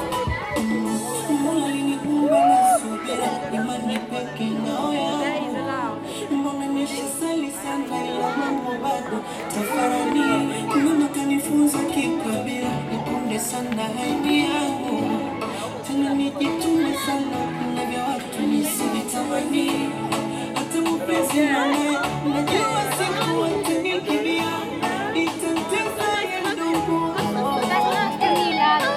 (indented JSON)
{
  "title": "AfricanTide, Igglehorst, Dortmund - Sounds of Solidarity...",
  "date": "2017-07-15 15:44:00",
  "latitude": "51.51",
  "longitude": "7.41",
  "altitude": "86",
  "timezone": "Europe/Berlin"
}